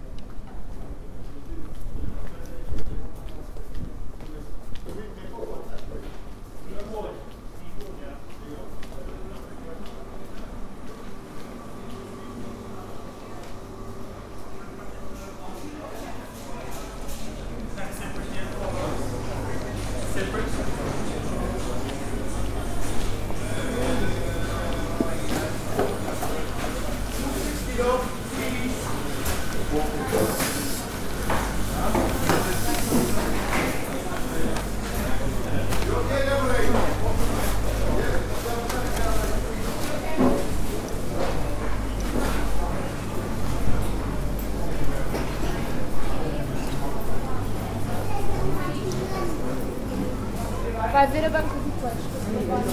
Princes St, Centre, Cork, Ireland - English Market
A coffee and a walk through the English Market on a Bustling Saturday Morning. Market sounds topped off with some spontaneous tin whistling near the end.
9 November 2018